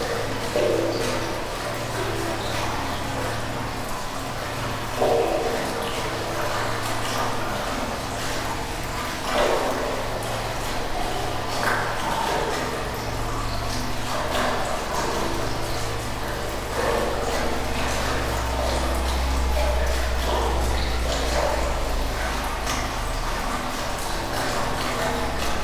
water reservoir - KODAMA document
the sound inside of the village water reservoir - above la pommerie, france - recorded during KODAMA residency September 2009
France, 20 October 2009